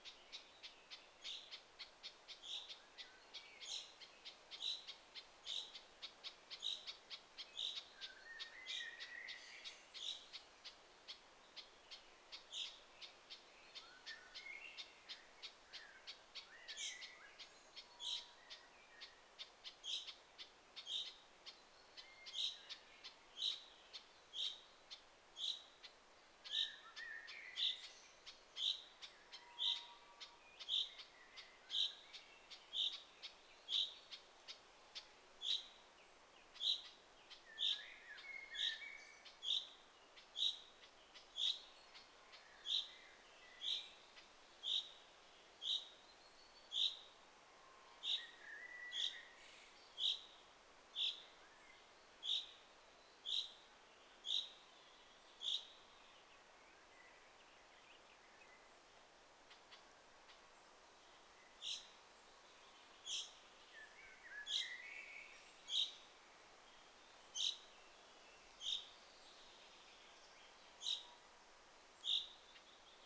Lithuania, Utena, crossroads in the wood
windy day, some biking through local area